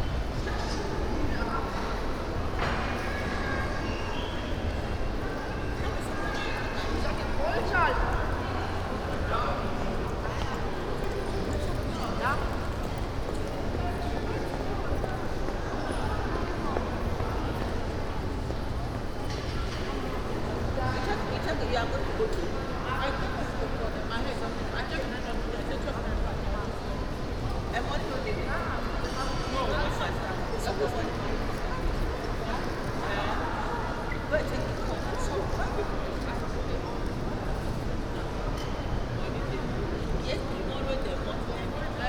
In der Einkaufspassage. Klänge von Menschen die auf dem Steinboden gehen. Vorbeigehen an verschiedenen Ladenlokalen. Der Hallraum der hochreflektiven Stein und Glass Architektur.
Inside the shopping gallery. people walking on the stone floor, passing by several open stores. the reverb of the high reflecting glass and steel roof.
Projekt - Stadtklang//: Hörorte - topographic field recordings and social ambiences
Essen, Germany, May 2011